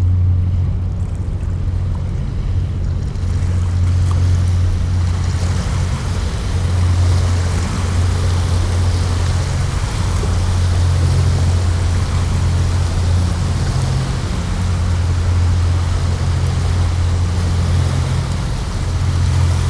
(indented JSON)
{
  "title": "Greenwich, UK - Between Greenwich Power Plant & the Thames",
  "date": "2017-01-10 17:10:00",
  "description": "Recorded with a pair of DPA 4060s and a Marantz PMD661.",
  "latitude": "51.49",
  "longitude": "0.00",
  "altitude": "8",
  "timezone": "GMT+1"
}